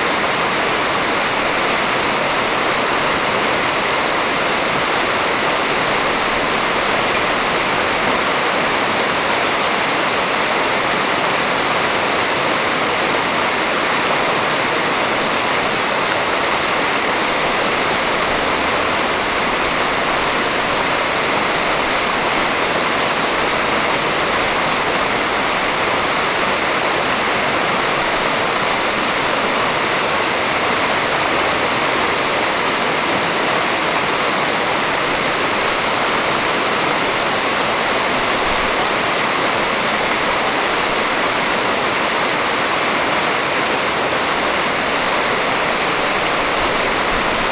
Fontaine de gauche à la place du Martroi, Orléans (45 - France)
16 May 2011, 11:07